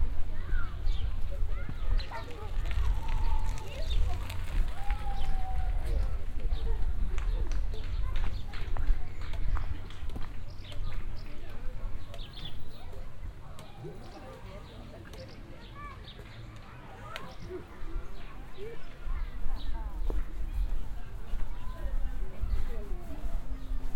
Walking around in the camping areal of the small town. Children and grown ups walking around or playing badmington or other games. kids on bicycles passing by, a zipper of a tent opening.
Enscherange, Campingareal
Umherlaufend im Campingareal der kleinen Ortschaft. Kinder und Jugendliche laufen umher oder spielen Badminton oder andere Spiele. Kinder auf Fahrrädern fahren vorbei, ein Reißverschluss von einem Zelt öffnet sich.
Enscherange, terrain de camping
Promenade dans le terrain de camping de la petite ville. Des enfants et adolescents se promènent ou jouent au badminton et d’autres jeux. Des enfants passent en vélo, le bruit de la fermeture éclair d’une tente.
Project - Klangraum Our - topographic field recordings, sound objects and social ambiences